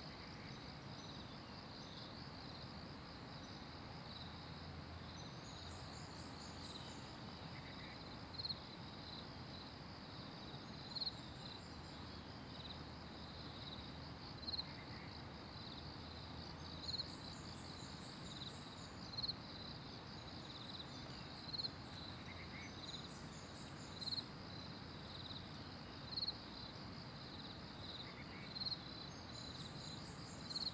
水牆, 桃米里Puli Township - Sound of insects
Sound of insects, Bird sounds, Traffic Sound
Zoom H2n MS+XY
Nantou County, Puli Township, 水上巷, 21 April 2016, 06:46